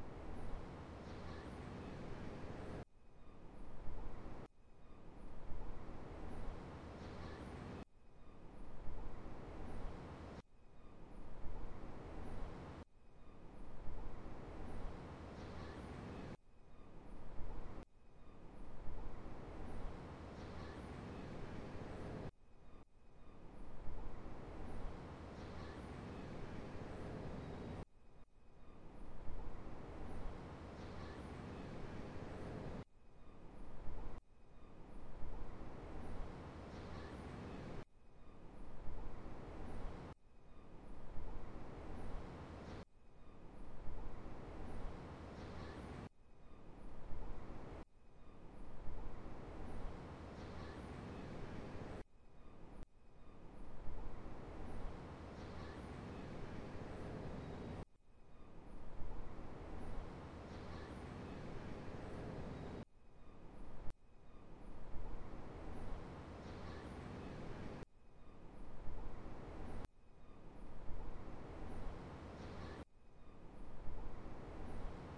Cabo Branco, Spring (October), Sunday morning. Recorded from my varando at ca 50 m. from Avenida Cabo Branco and seaside.
João Pessoa - Paraíba, Brazil, 28 October 2012, 07:00